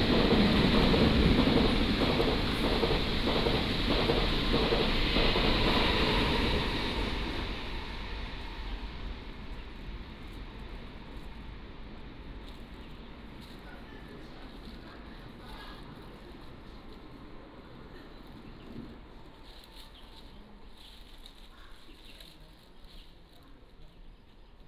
Dounan Station, 雲林縣斗南鎮南昌里 - At the station platform
At the station platform, Train arrives and leaves, Station information broadcast